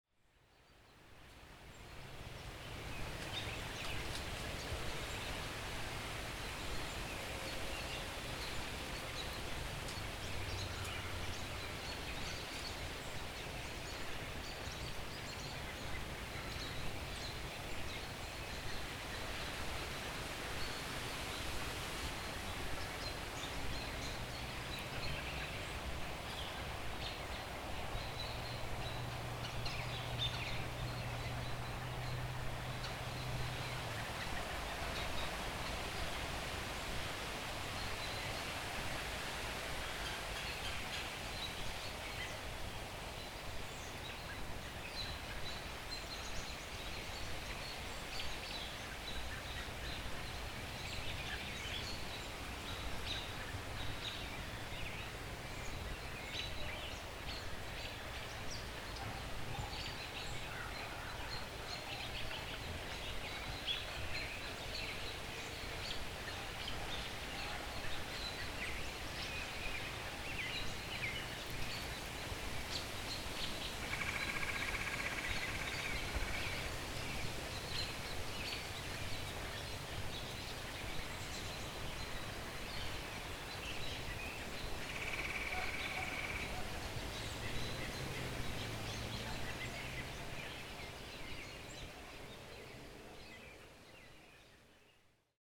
Birdsong in the late afternoon on the Tree Trail, Lost Bridge West State Recreation Area
Indiana, United States of America, 17 October 2020